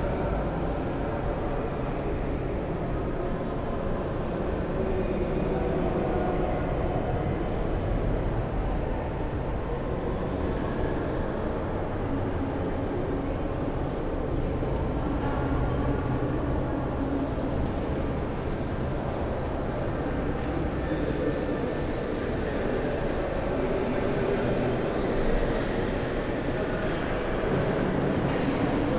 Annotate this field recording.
great long corridor halls of the ITU architecture building